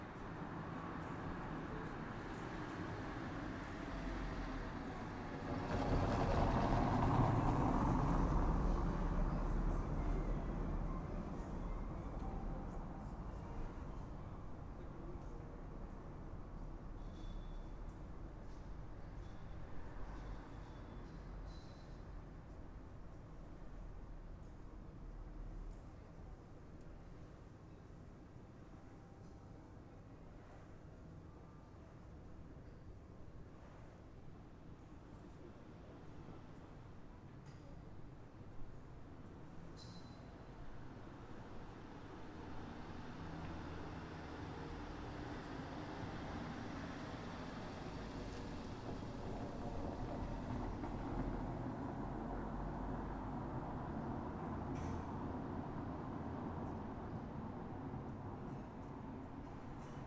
Tyrševa ulica, Gregorčičeva ulica, Maribor, Slovenia - corners for one minute
one minute for this corner - tyrševa ulica and gregorčičeva ulica